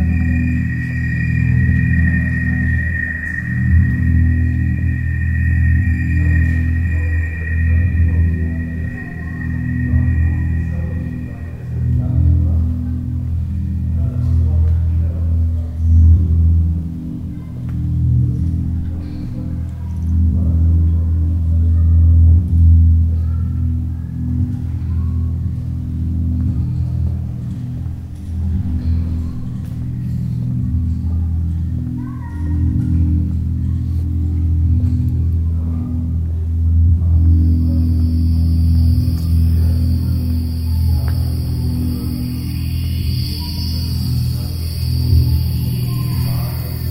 klanginstallation in der markthalle der spichernhöfe zur projektpräsenation im september 07 im rahmen von plan 07
project: klang raum garten/ sound in public spaces - in & outdoor nearfield recordings